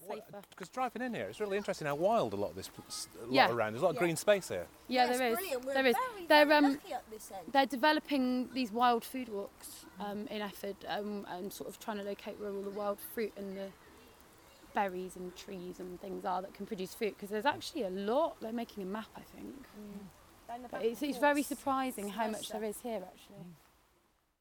Efford Walk One: About green space on Military Road - About green space on Military Road
Plymouth, UK, 14 September 2010, 17:37